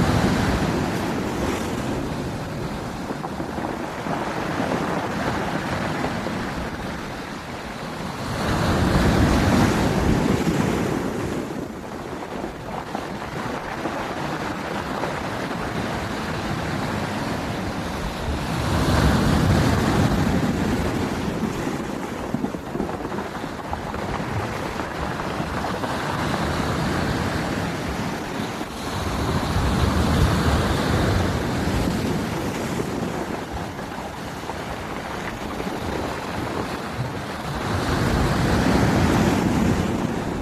waves crashing off Portland Bill